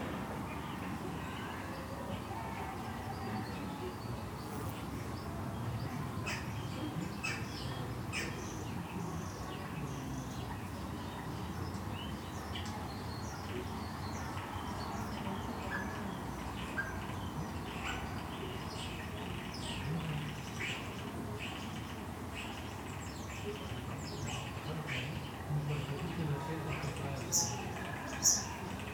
Ottignies-Louvain-la-Neuve, Belgique - Common Starling song
The very soothing sound of my home from the balcony. At the backyard, children playing, neighbors doing a barbecue. In the gardens, two Common Starling discussing and singing. This bird is exceptional and vocalize very much. In aim to protect the territory, the bird imitates Common Buzzard hunting, European Green Woodpecker distress shout, Blackbird anxiety shout. Also, they imitate Canada Goose, because there's a lot of these birds on the nearby Louvain-La-Neuve lake. In aim to communicate, the bird produce some strange bursts of creaks. The contact shout, when birds are far each other, is a repetitive very harsh shrill sound.
These birds are not here every day. They especially like to eat rotten fruits during autumn. It's a real pleasure when they are at home.
Ottignies-Louvain-la-Neuve, Belgium, 30 September 2018, 12:37